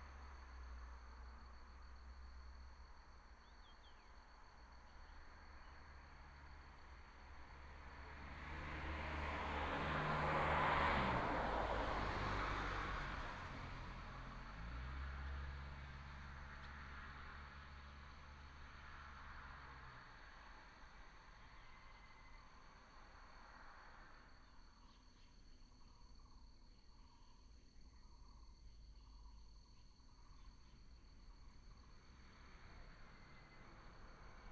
壽卡休憩亭, Daren Township, Taitung County - Bird and Traffic sound
Mountain road, Bird cry, Traffic sound
Binaural recordings, Sony PCM D100+ Soundman OKM II
Daren Township, Taitung County, Taiwan, 28 March 2018